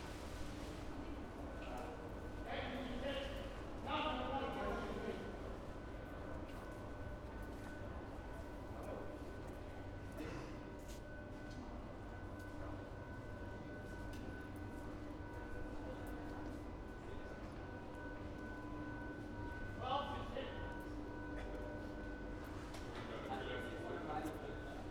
walking along the street into the subway station and following a mad guy who was stopping every once in a while and shouting at the top of his lungs towards unspecified direction. he got on the train and rode away but i could still see him shouting in the car, waving his hands and scaring the hell out of the other passengers.
Berlin, Germany, 30 May 2015, ~15:00